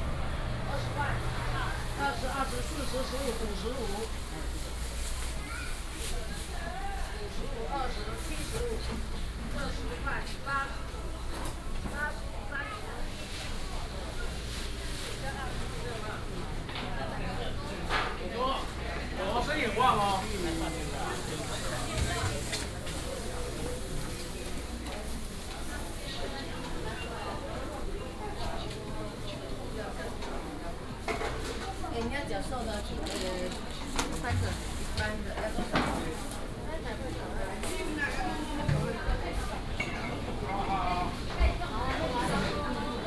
湖口鄉第一公有零售市場, Hsinchu County - Inside the market
Inside the market
18 January 2017, ~11:00